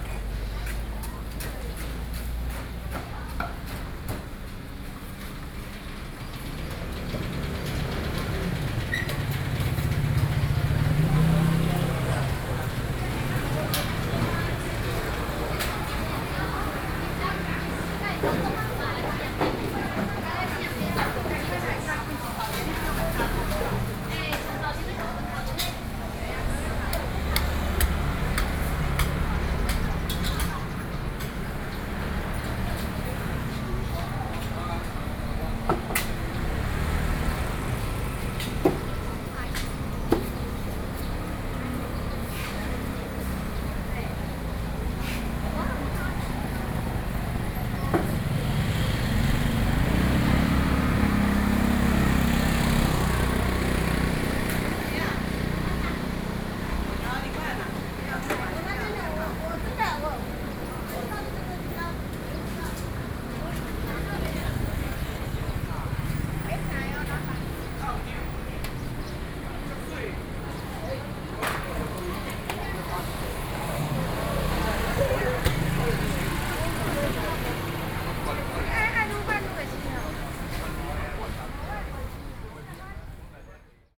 Heping St., Sanxia Dist. - Walking through the traditional market
Walking through the traditional market, Traffic Sound
Binaural recordings, Sony PCM D50
Sanxia District, New Taipei City, Taiwan